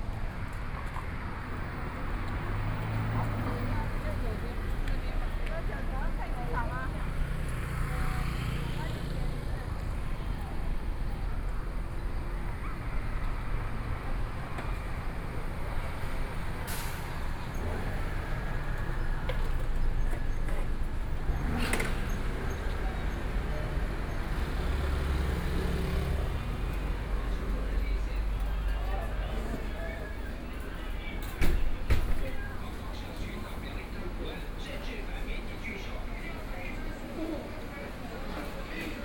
中山區永安里, Taipei city - soundwalk
Walking on the street, In the restaurant's sound, Traffic Sound
Please turn up the volume a little. Binaural recordings, Sony PCM D100+ Soundman OKM II
Zhongshan District, Taipei City, Taiwan, 12 April 2014